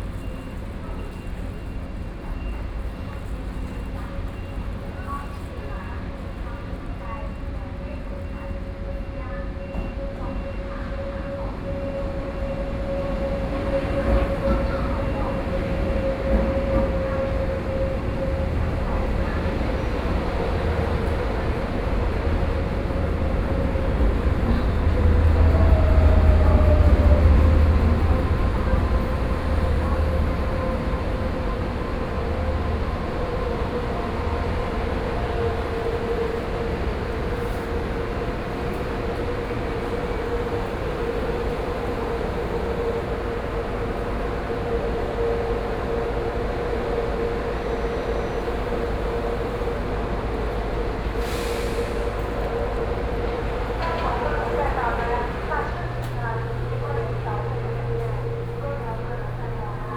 Kaohsiung Station, Taiwan - soundwalk
From the station hall, Then walk towards the direction of the station platform
Kaohsiung City, Taiwan, 15 May 2014, 10:36